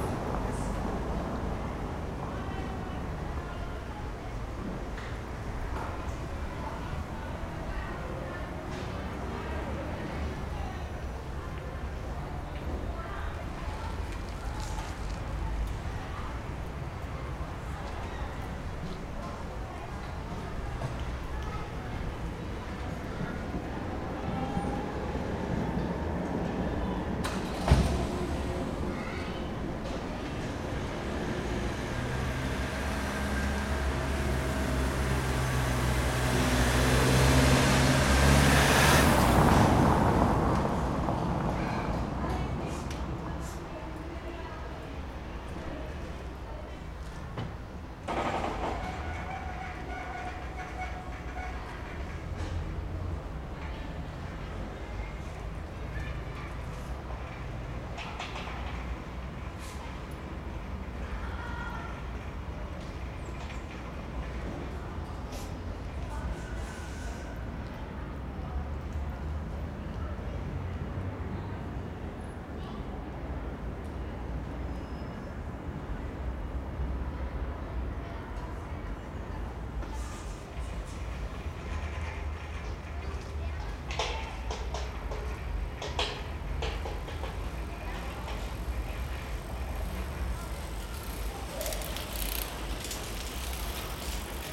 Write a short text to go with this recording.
vor der nachbarschaftsschule in der gemeindeamtsstraße. startende autos, passanten, schwatzende lehrerinnen.